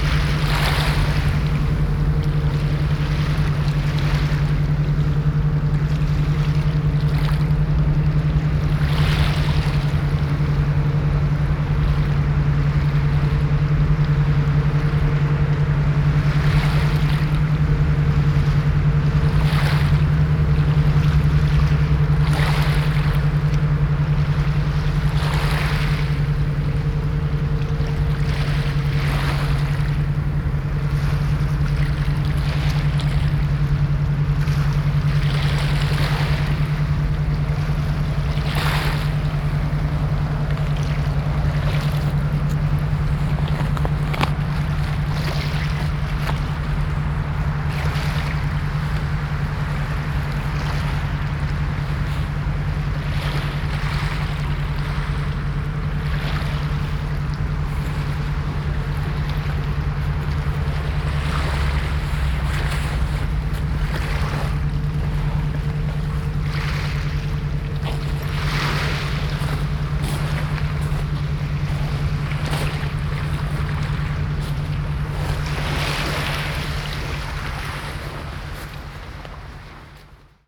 Richmond Terrace, Staten Island
waves, ships, binaural recording
NY, USA, 12 January